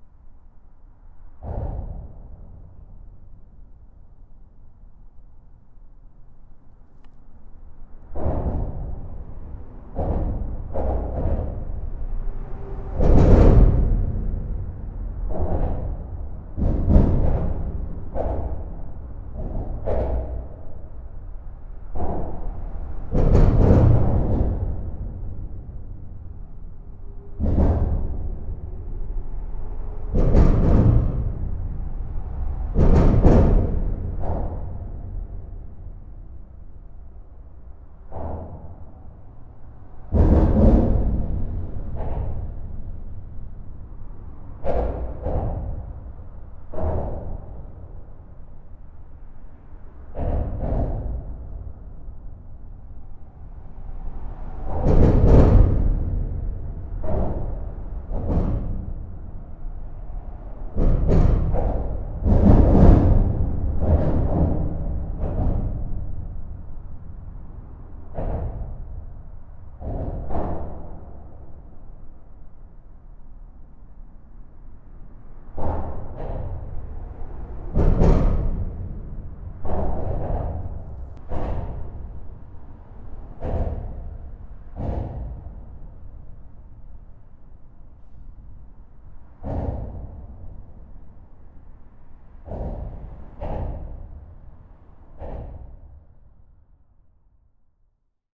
Normandie, France - Pont de Normandie
The Normandie bridge, recorded inside the bridge. This is an extreme environment, with an exacerbated violence. A lot of trucks are driving fast, doing huge impacts on the bridge structure. This bridge is very big and an interesting place to record.
21 July